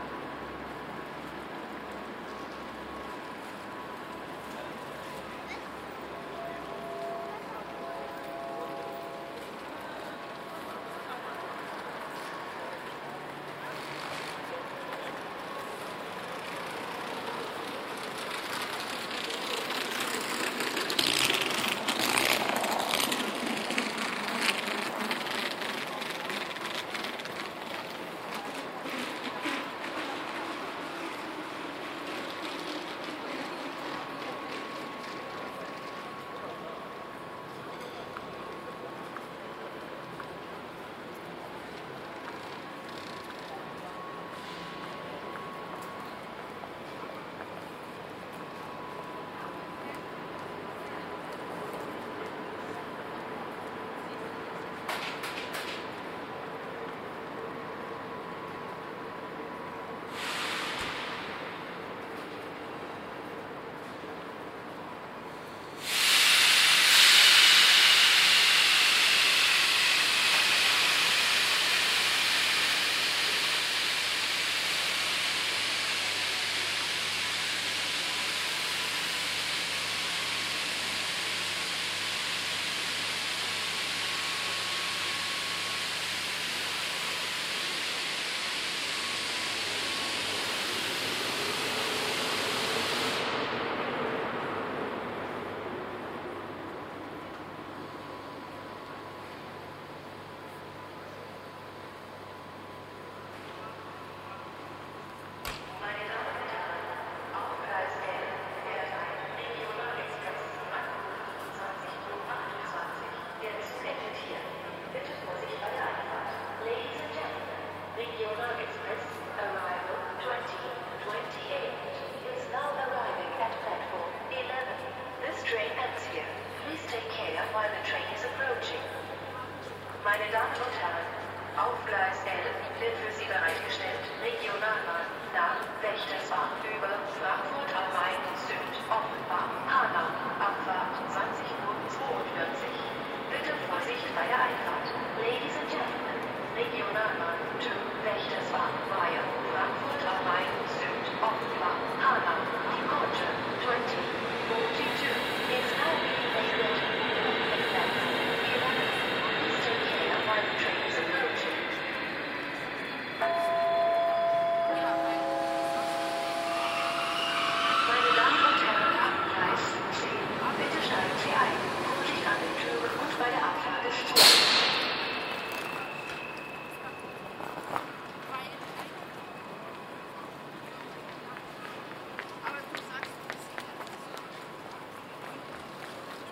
project: social ambiences/ listen to the people - in & outdoor nearfield recordings
hier - bahnhof ambiencen